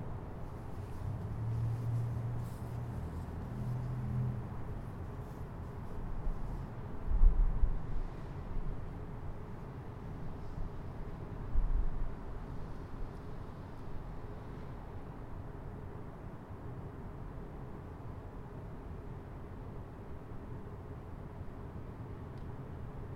N Cascade Ave, Colorado Springs, CO, USA - PreserveHillApril2018
Sitting on the preserve hill, nearby a sports practice, windy, dead cat used. The microphone is the ZoomH1.
26 April